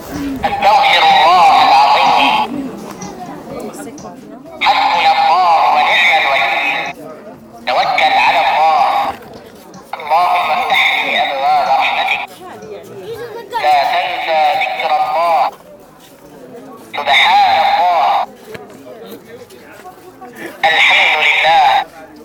{"title": "Tourbet El Bey, Tunis, Tunesien - tunis, souks, automatic prayer box", "date": "2012-05-05 16:50:00", "description": "On a small alley inside the souks area at a religious device stand. The sound of a small plasticislam automatic prayer box - made in china.\ninternational city scapes - social ambiences and topographic field recordings", "latitude": "36.79", "longitude": "10.18", "altitude": "11", "timezone": "Africa/Tunis"}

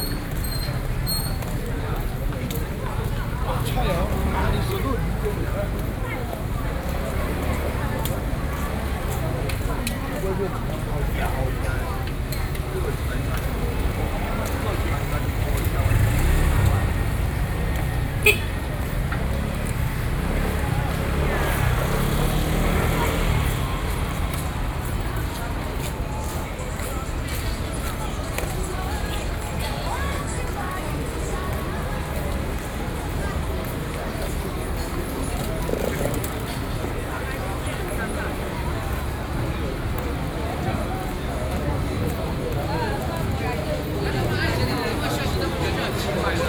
{"title": "Guangzhou St., Wanhua Dist., Taipei City - SoundWalk", "date": "2012-12-03 18:38:00", "latitude": "25.04", "longitude": "121.50", "altitude": "13", "timezone": "Asia/Taipei"}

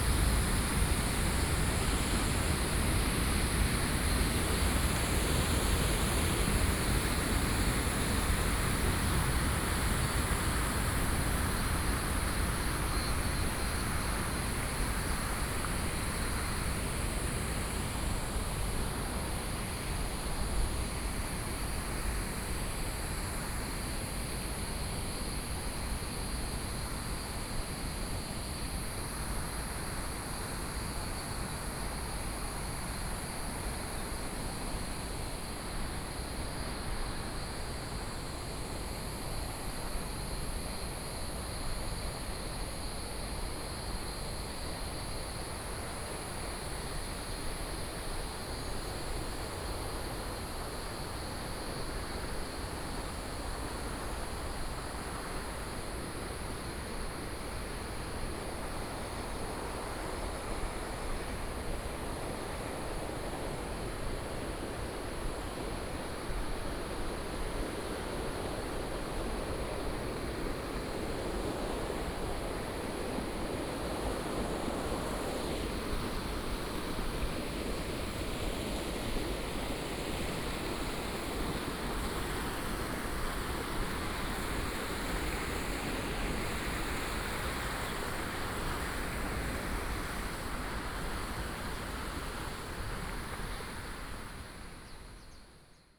{"title": "TaoMi River, 埔里鎮 Nantou County - Walking along the stream", "date": "2015-08-13 05:49:00", "description": "Walking along the stream, Insects sounds, The sound of water streams", "latitude": "23.94", "longitude": "120.94", "altitude": "457", "timezone": "Asia/Taipei"}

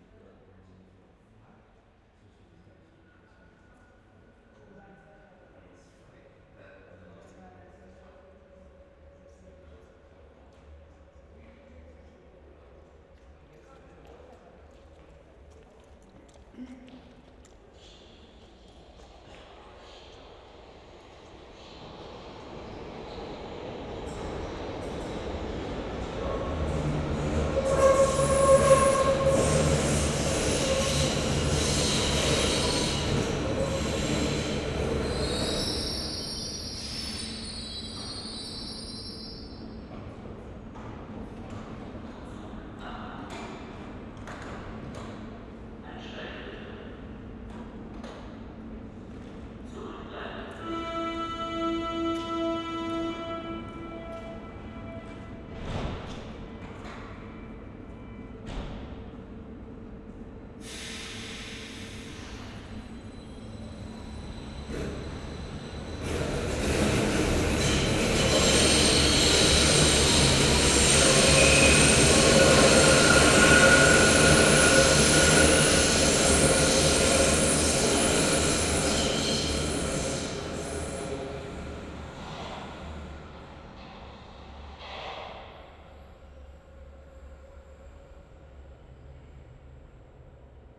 {"title": "Gleisdreieck, Kreuzberg, Berlin - saturday night station ambience", "date": "2012-03-24 22:25:00", "description": "station ambience at Gleisdreieck on a saturday night. the whole area around Gleisdreieck has been a wasteland for decades and is now transforming rapidely into a leisure and recreation area.", "latitude": "52.50", "longitude": "13.37", "altitude": "36", "timezone": "Europe/Berlin"}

